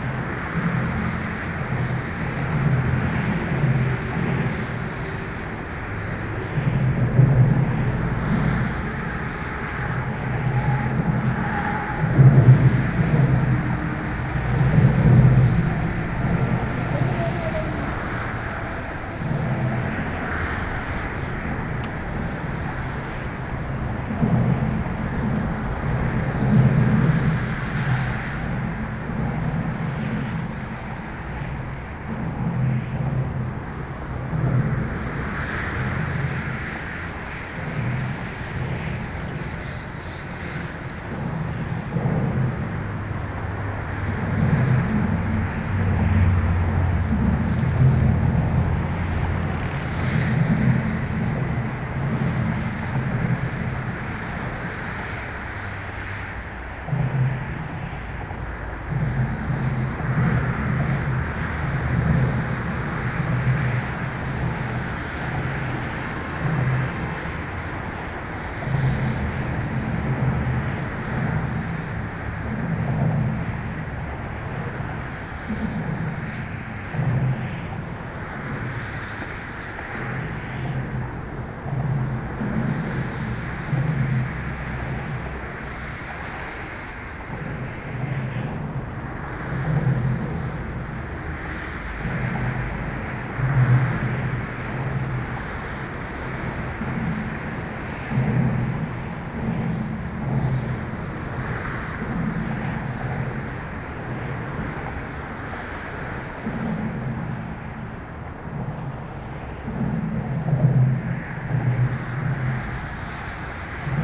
berlin, baumschulenweg: neben autobahnbrücke - borderline: berlin wall trail, close to highway bridge
traffic noise close to the bridge
borderline: september 24, 2011
Berlin, Germany, 24 September 2011, 13:35